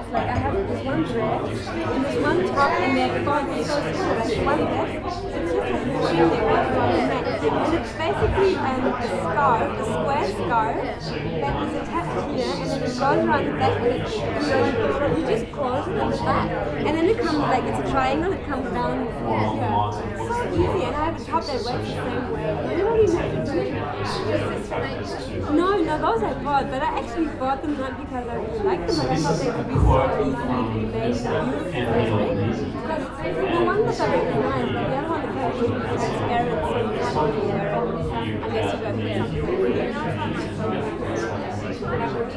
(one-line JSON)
{"title": "neoscenes: media arts get-together", "date": "2011-06-08 21:15:00", "latitude": "-37.81", "longitude": "144.96", "altitude": "41", "timezone": "Australia/Melbourne"}